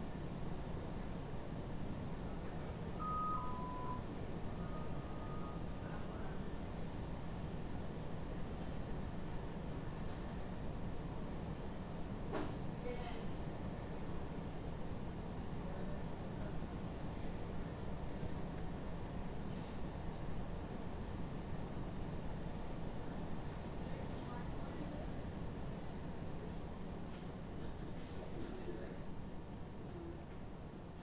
Lonely hospital corridor with patients chiming for help, Houston, Texas
Roaming the hallways of Memorial Hermann Hospital after midnight, encountering lone walkers supported by I.V. rigs; doctors and nurses wheeling gurneys along, patients chime for their nurses from their rooms. Elevator doors opening/closing, people talking, shoes squeaking, A/C blowing.
Tascam DR100 MK-2 internal cardioids
TX, USA, 30 July 2012, 16:11